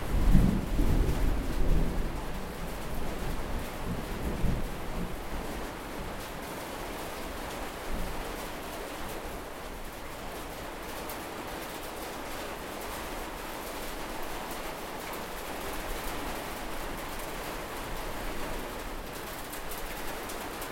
rain on barn with tin roof - Propach, rain on barn with tin roof
inside recording, june 1, 2008 - project: "hasenbrot - a private sound diary"